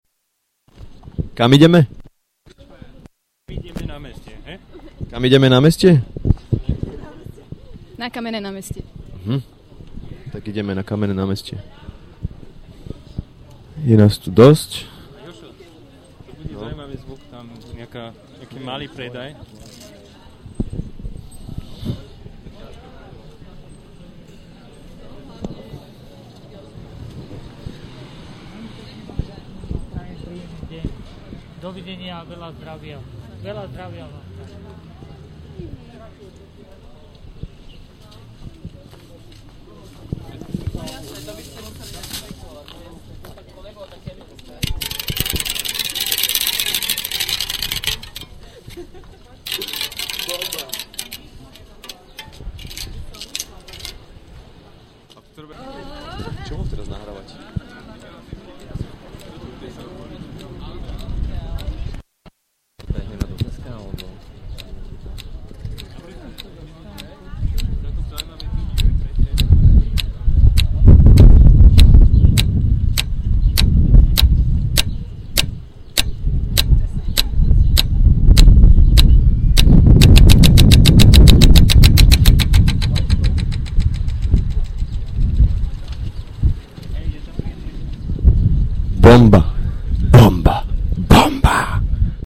placetellers walk bratislava :: kamenne namestie - placetellers walk :: namestie snp
about 20 people on their way...
abstract:
short discussion about where to go :: so, heading to kamenne namestie, which is identified as "kamideme namestie" - "where do we go square" :: some street merchants on the way :: all the best for your health! :: sounds of the traffic light